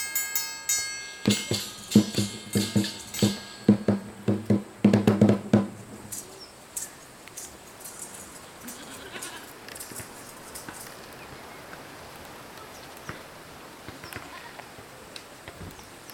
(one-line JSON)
{"title": "Siachilaba Primary School, Binga, Zimbabwe - Under the Siachilaba Baobab Tree...", "date": "2012-11-07 10:07:00", "description": "…under the huge ancient Baobab Tree on the grounds of Siachilaba Primary School… “twenty men cannot span that tree…”… the wind in the branches… and a music class in process…", "latitude": "-17.90", "longitude": "27.28", "altitude": "521", "timezone": "Africa/Harare"}